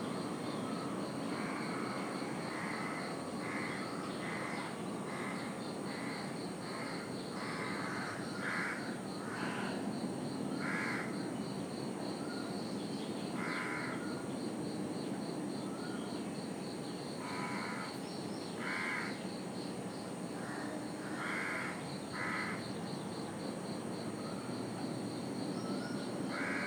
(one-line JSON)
{"title": "Unnamed Road, Fatrade, Cavelossim, Goa, India - 19 Lazy Goa", "date": "2016-03-19 20:21:00", "description": "Evening recording on a more quiet south part of Goa", "latitude": "15.19", "longitude": "73.94", "altitude": "6", "timezone": "Asia/Kolkata"}